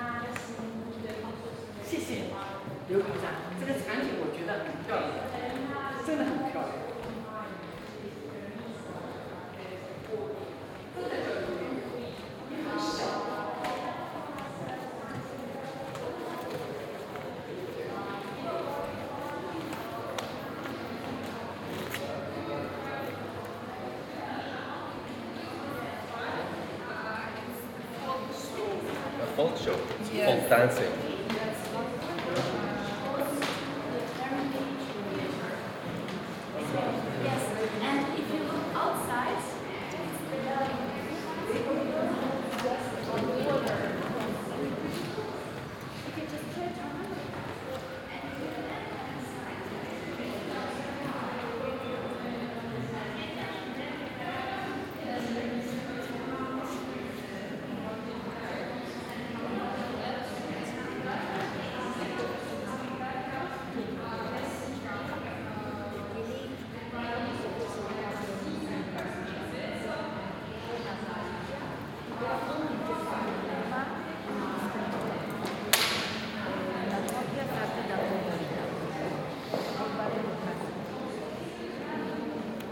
Binaural recording of walk thourgh I suppose the biggest room of Ermitage, with huge reverb and multiple languages reflections.
Sony PCM-D100, Soundman OKM
7 September, 15:24